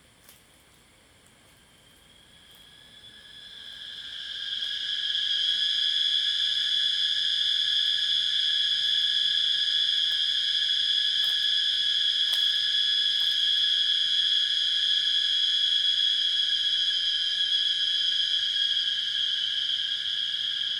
華龍巷, 埔里鎮Nantou County - Cicadas sound
In the woods, Cicada sounds
Zoom H2n MS+XY